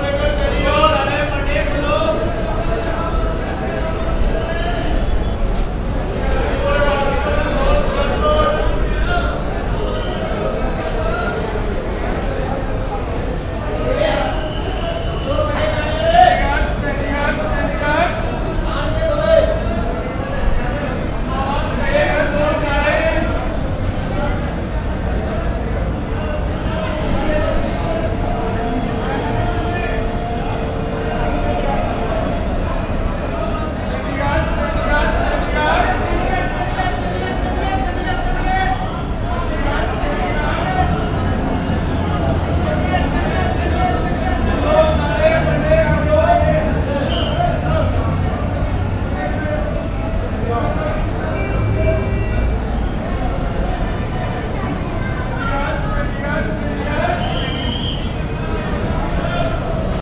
ISBT Bus Station, Delhi
Station before I took my bus to Mcleod Ganj. Insanity of crowds and confusion.
Delhi, India, 25 July 2011